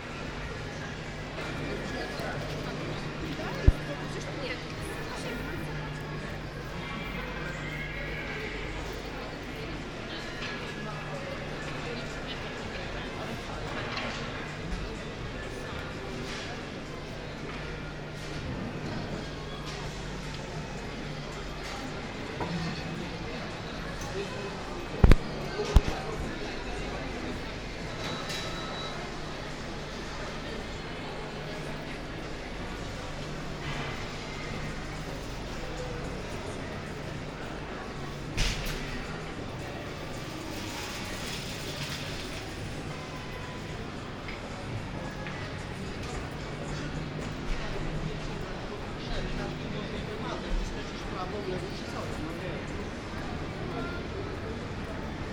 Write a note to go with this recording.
Aleksandra Chciuk, Bartek Talaga